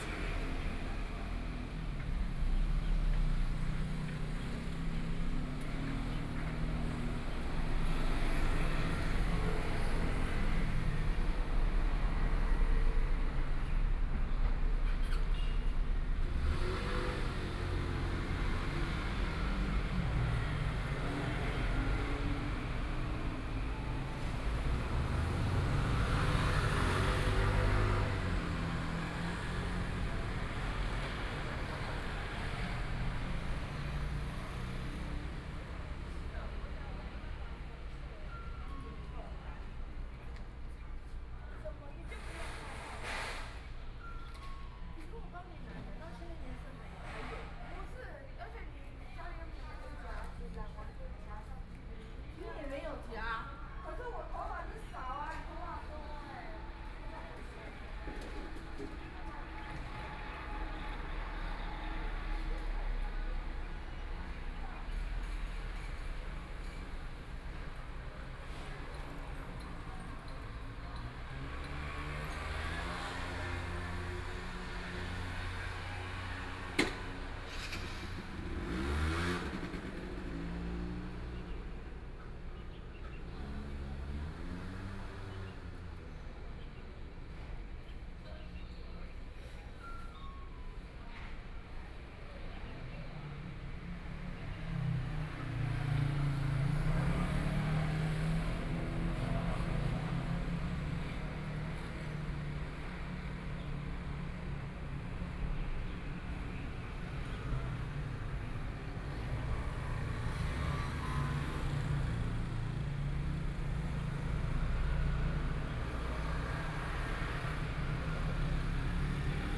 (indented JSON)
{
  "title": "Chang'an St., Miaoli City - Morning town",
  "date": "2013-10-08 09:03:00",
  "description": "The sound of traffic, Sitting next to a convenience store, Zoom H4n+ Soundman OKM II",
  "latitude": "24.57",
  "longitude": "120.83",
  "altitude": "56",
  "timezone": "Asia/Taipei"
}